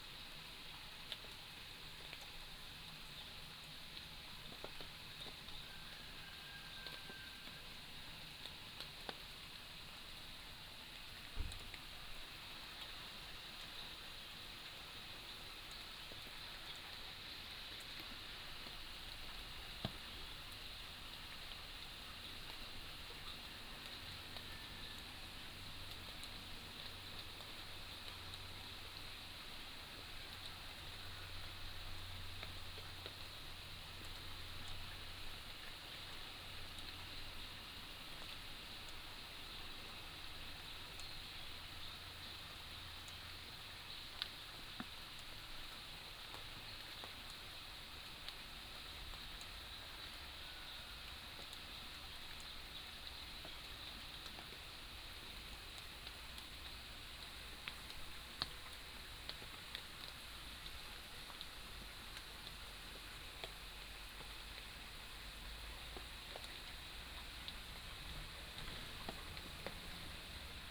Raindrop, In the morning, Bird calls, Crowing soundsThe sound of water streams
Nantou County, Puli Township, 桃米巷11-3號, April 2015